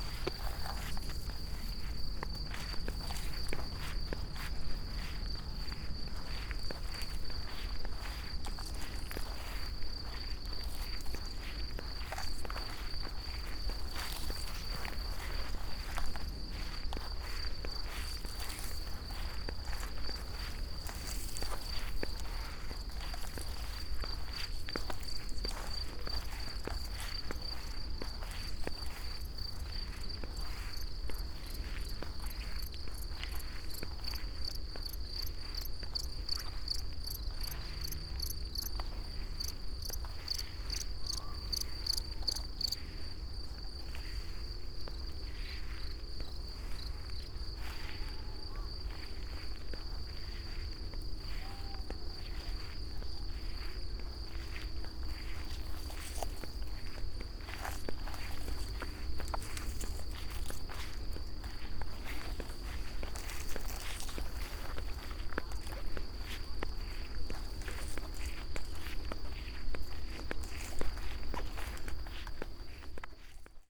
path of seasons, june meadow, piramida - evening walk
with book in my hand, birds and crickets allover, distant owl, meadow turning its color into dry straw
Maribor, Slovenia, June 2014